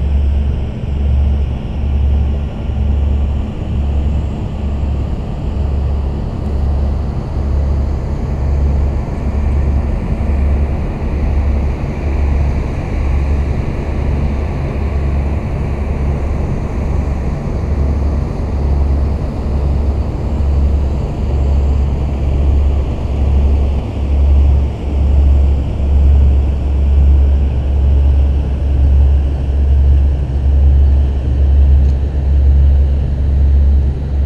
Riemst, Belgium - Boats on the Albertkanaal
On a sunny morning, two boats are passing on the Albertkanaal. In first, Figaro from Oupeye, Belgium, (MMSI 205203890, no IMO) a cargo ship, and after Phoenix from Ridderkerk, Netherlands, (MMSI: 244630907, no IMO) an engine dumper.